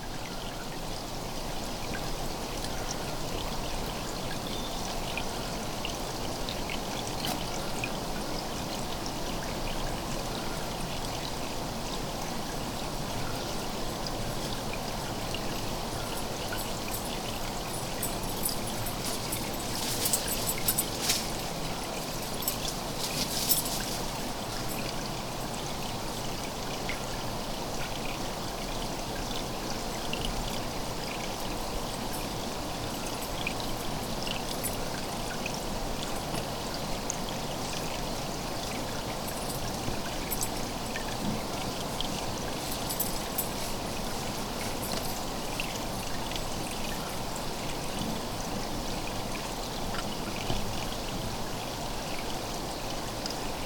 Cave Hill Mountain
I recorded this near a little water stream.